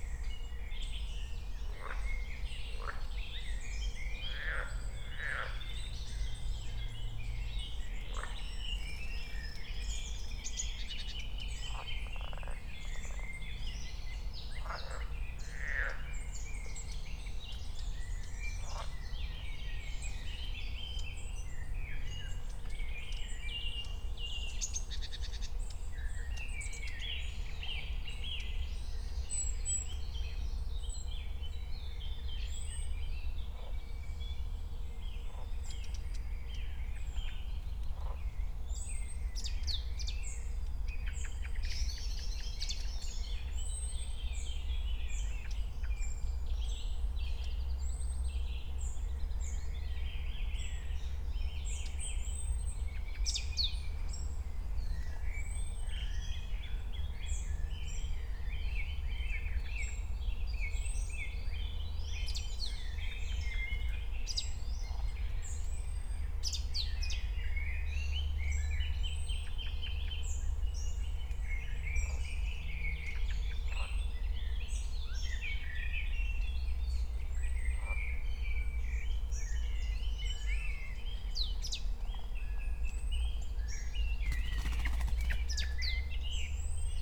10:00 voices, crows, fluttering wings, other birds
Deutschland, 23 May 2020